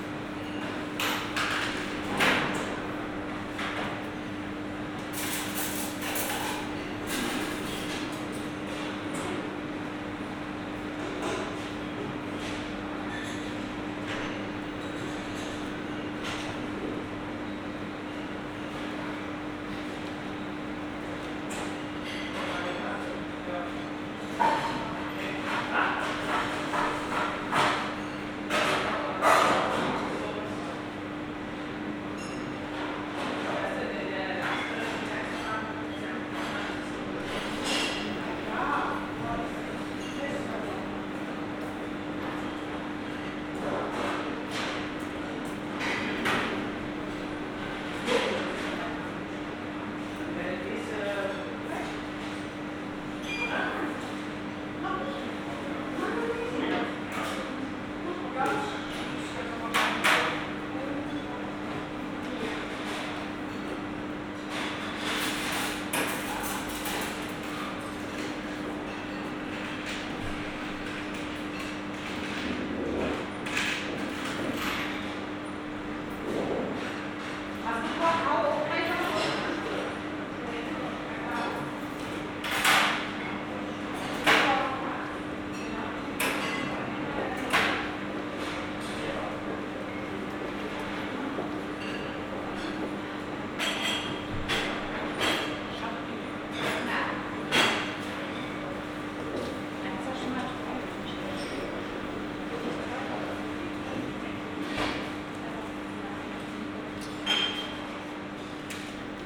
berlin, walterhöferstraße: zentralklinik emil von behring, kantine - the city, the country & me: emil von behring hospital, cafeteria
busy staff members, guests
the city, the country & me: september 6, 2012